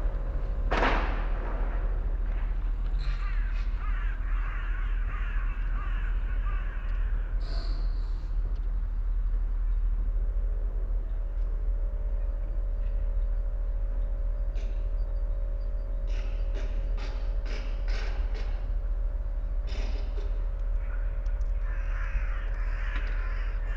Beermannstr., Berlin, Deutschland - preparation for demolition
trees, ponds, gardens and allotments have vanished. workers prepare a house for demolition. the space is required by the planned motorway / Autobahn A100.
(Sony PCM D50, DPA4060)
25 March 2015, ~11:00